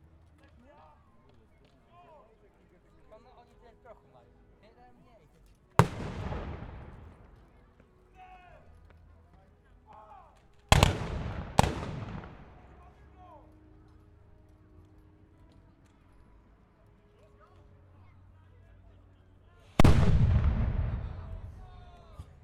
Lidzbark Warmiński, Bishops Castle, Battle - Napoleon's battle (part 2)
The biggest battle of Napoleon's east campaigne which took place in Warmia region (former East Preussia).